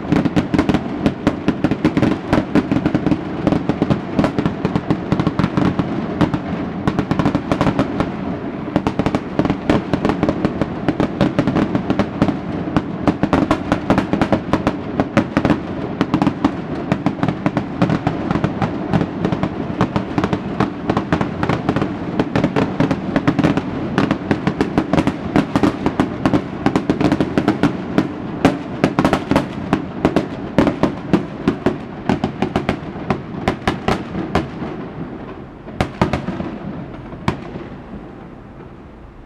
Yongheng Rd., Yonghe Dist., New Taipei City - Fireworks and firecrackers
Fireworks and firecrackers
Zoom H4n+ Rode NT4